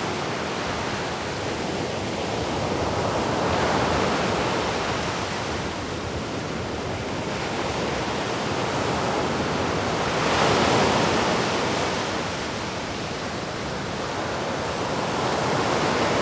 Porto, west corner of the city, at the beach - angler in the wind
a short walk on the windy beach. muscular waves slash at the sand. many terns sitting around, crying out occasionally. two anglers shouting to each other. wind shredding the words, they finally let it slide as the wind is too strong to communicate.